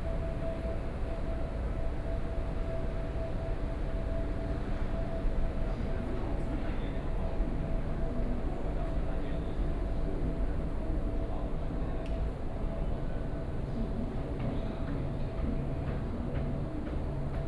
Chiang Kai-Shek Memorial Hall Station, Taipei - soundwalk
Walking into the MRT, Through the underpass, Waiting for the train platform to the MRT, Sony PCM D50 + Soundman OKM II
27 September, 19:49